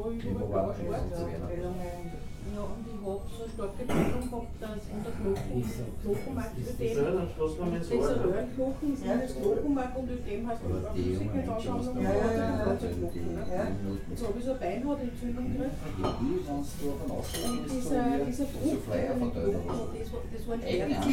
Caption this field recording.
nestroystüberl, nestroystr. 4, 4040 linz